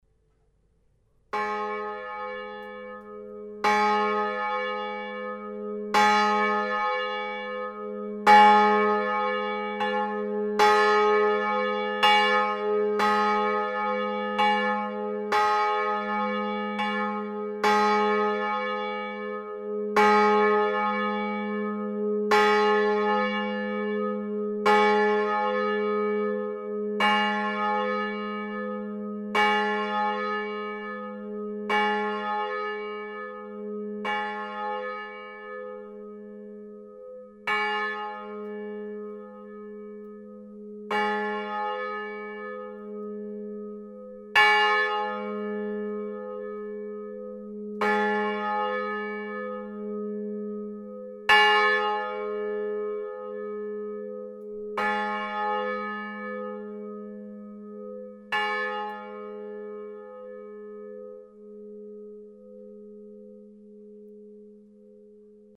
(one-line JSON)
{"title": "Chastre, Belgique - Chastre bell", "date": "2011-03-13 15:10:00", "description": "The bell of Chastre ringed manually. It's a very old and very poor bell.", "latitude": "50.61", "longitude": "4.64", "timezone": "Europe/Brussels"}